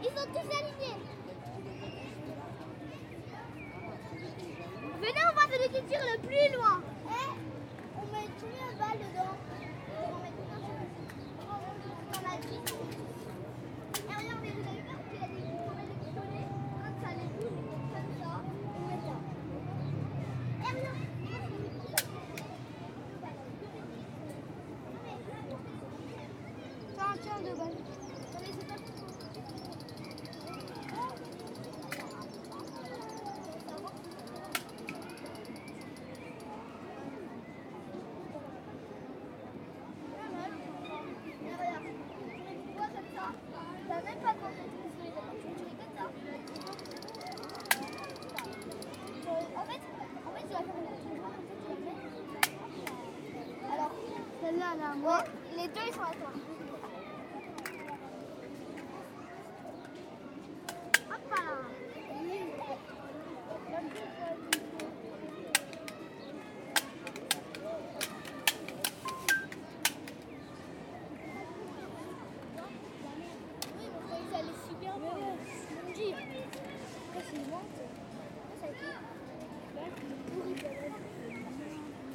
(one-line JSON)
{"title": "Parc des Buttes-Chaumont, Paris, France - Buttes Chaumont Park [Paris]", "date": "2011-05-16 15:14:00", "description": "Un samedi, Des enfants jouent avec un pistolet en plastique au parc .foule.des mister freezes.\nKids playing with a toy gun in the park.Nice Day.", "latitude": "48.88", "longitude": "2.38", "altitude": "66", "timezone": "Europe/Paris"}